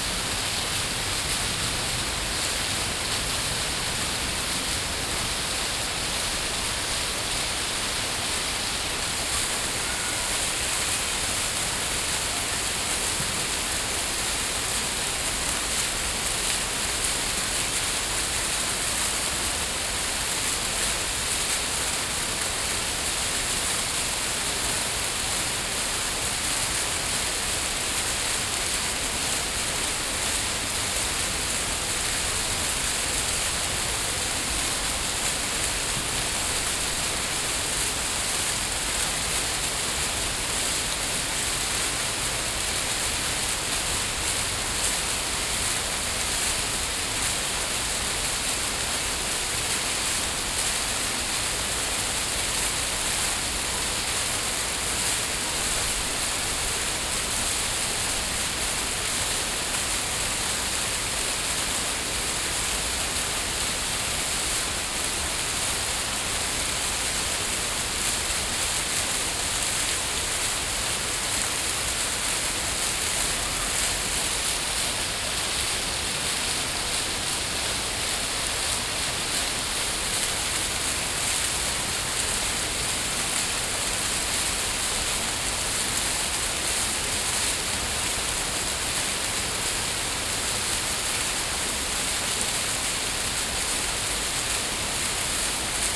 Parque de la Ciudadela, Passeig de Picasso, Barcelona, Barcelona, España - Parc de la Ciutadella Fountain Cascade
Water recording made during World Listening Day.
Barcelona, Barcelona, Spain, 18 July 2015